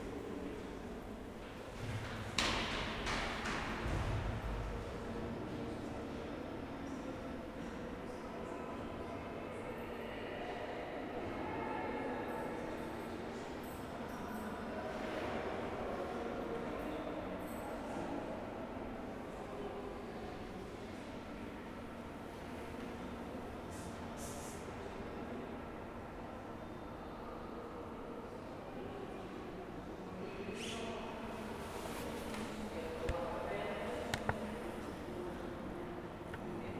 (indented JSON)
{
  "title": "Nossa Senhora do Pópulo, Portugal - Near the stairs",
  "date": "2014-03-03 17:07:00",
  "description": "Recorded with a ZoomH4N. Chatting, footsteps, doors, beeps.",
  "latitude": "39.40",
  "longitude": "-9.14",
  "timezone": "Europe/Lisbon"
}